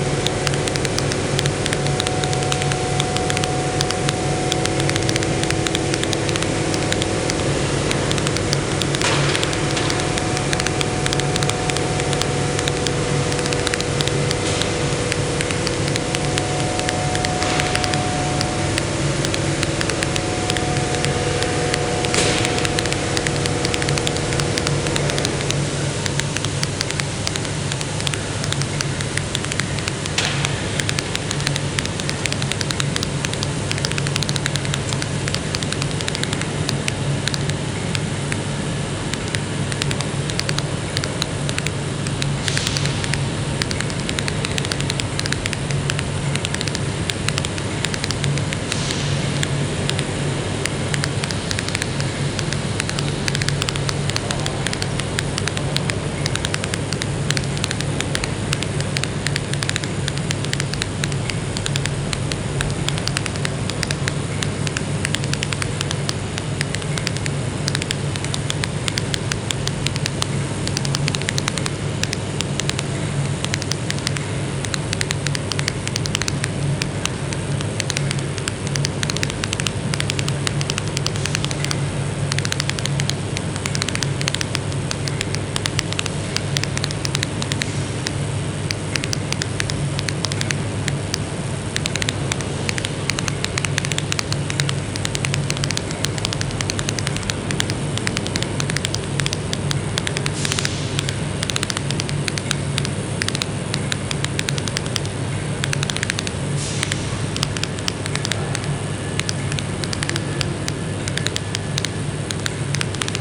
Glasgow, UK - Interference Between Platforms 5-6
Recorded with an Audio-Technica AT825b (stereo x/y) into a Sound Devices 633.